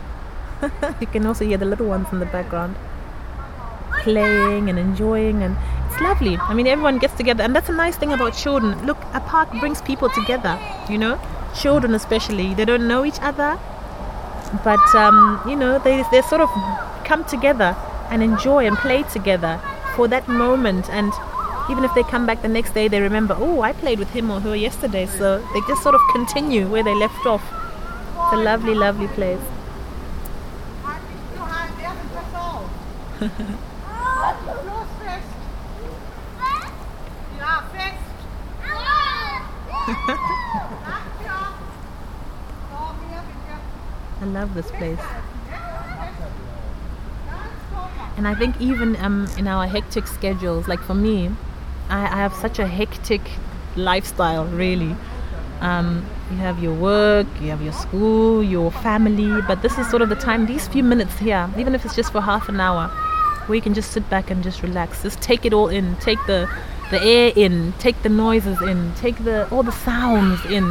Hallohpark, Bockum-Hövel, Hamm, Germany - Song of the park...
Yvonne continues a little with her "song to the park"… then the park tokes over…
archived at:
11 September, ~17:00